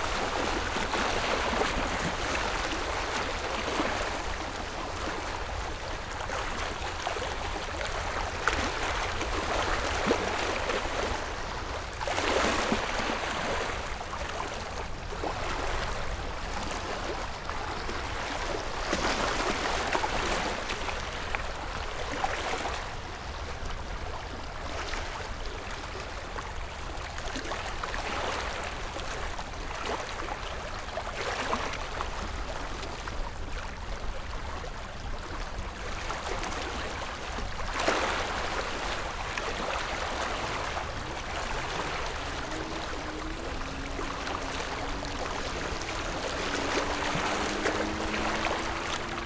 講美村, Baisha Township - Wave and tidal
Wave and tidal, At the beach
Zoom H6 + Rode NT4